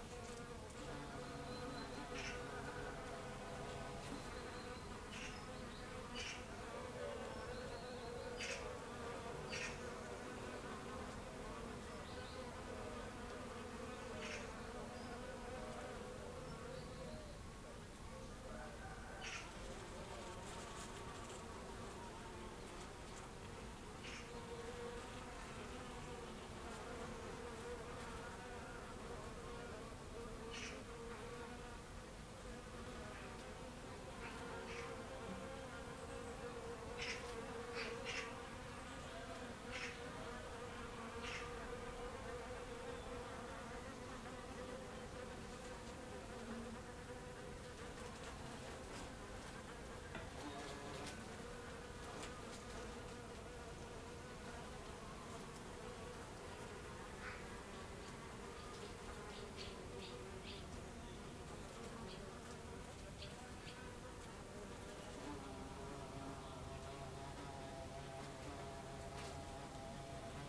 Lavacquerie, France - Bees
Bees on a Passiflore Tree at Les Esserres
Binaural recording with Zoom H6
21 August 2015, 14:46